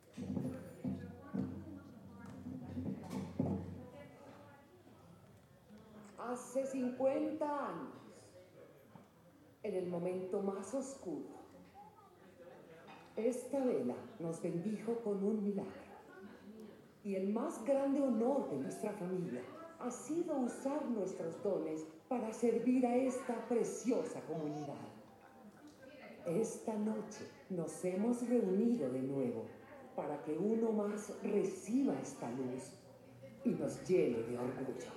Plaça de Gran Canaria, Bolulla, Alicante, Espagne - Bolulla - Espagne - Cinéma en plein air
Bolulla - Province d'Alicante - Espagne
Cinéma en plein air
Ambiance 1
ZOOM F3 + AKG 451B
Alacant / Alicante, Comunitat Valenciana, España, 15 July 2022, 21:45